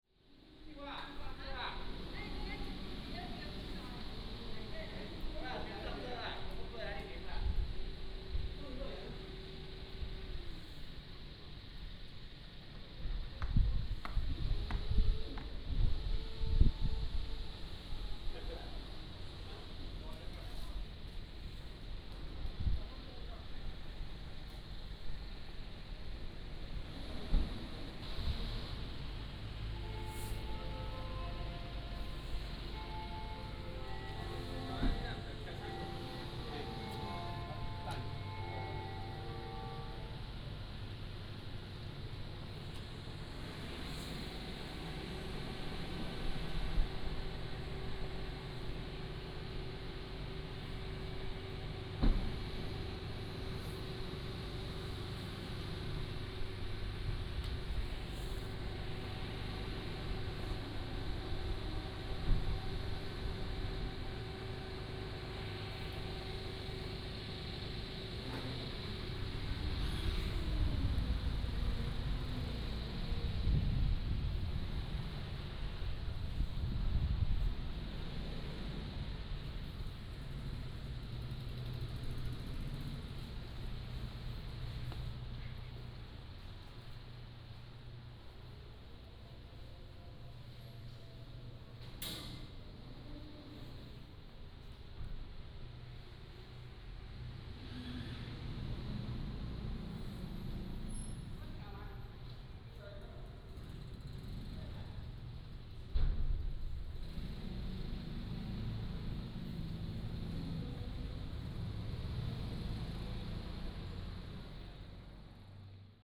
風櫃里, Penghu County - Small village
In the temple, Small village, Construction, wind